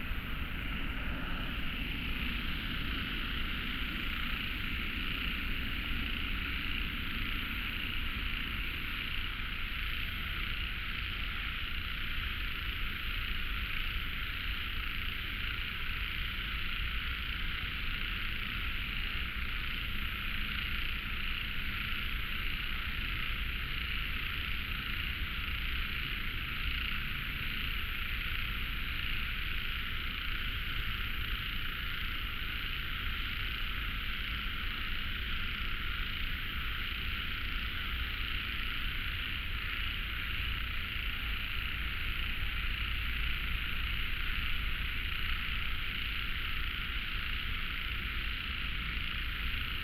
北投區關渡里, Taipei City - Frogs sound
Traffic Sound, Environmental sounds, Birdsong, Frogs, Running sound, Bicycle through
Binaural recordings